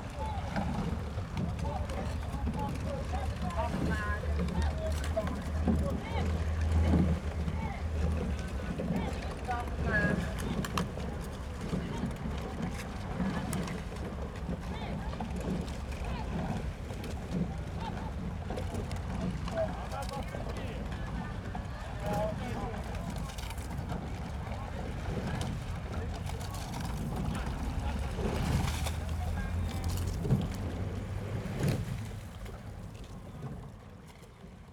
Berlin, Treptower Park, river Spree - rowing regatta training
Treptower Park, at the river Spree, training for a rowing regatta, boats passing-by back and forth, river side ambience
(SD702, DPA4060)